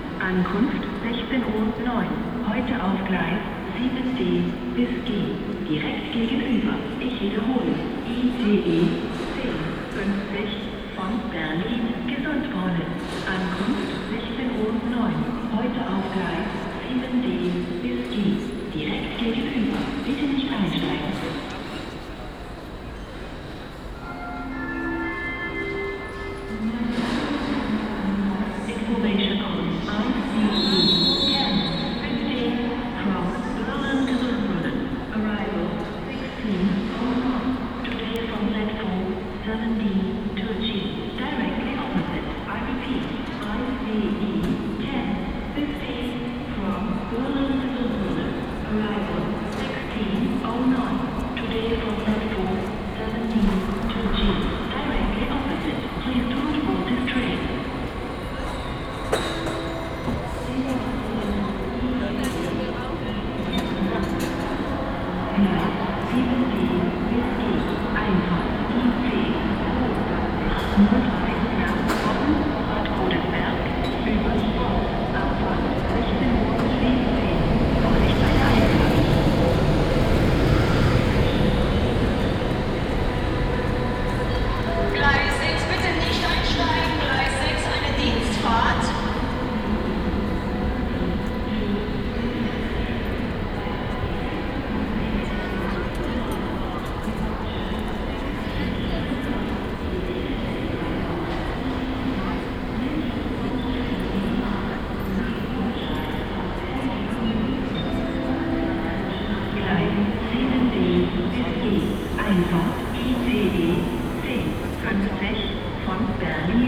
Trankgasse, Köln, Duitsland - Köln Hauptbahnhof
Binaural recording of general atmosphere at the platforms.